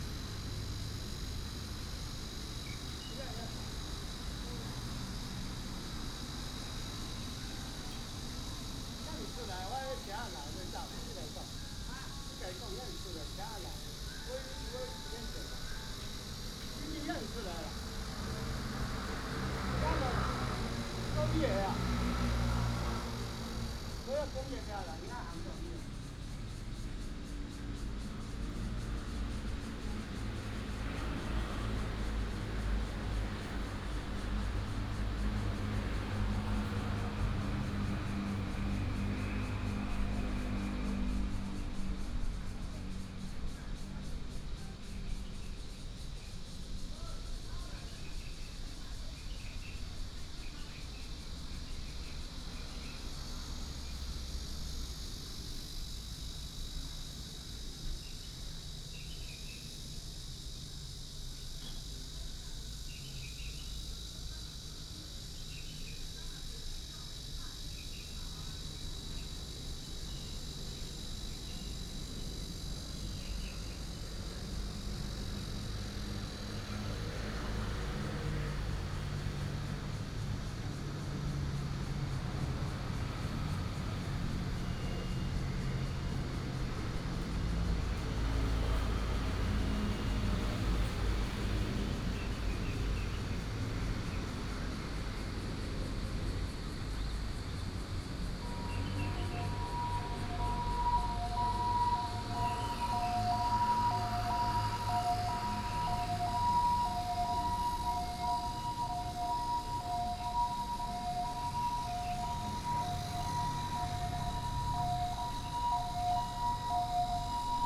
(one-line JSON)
{"title": "舊社公園, Zhongli Dist., Taoyuan City - Small park", "date": "2017-07-28 07:53:00", "description": "in the Park, Cicada cry, birds sound, traffic sound, ambulance", "latitude": "24.95", "longitude": "121.22", "altitude": "135", "timezone": "Asia/Taipei"}